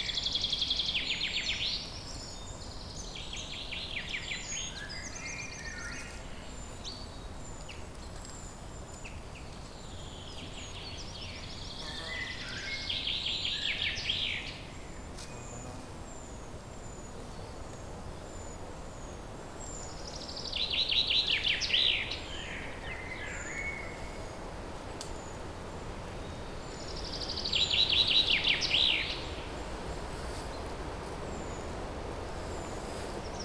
Bonaforth, Höllegrundsbach Deutschland - Höllegrundsbach 02 no water during summer
recording in the dry creek bed of the Höllegrundsbach. There is no water during summer or like now hot spring.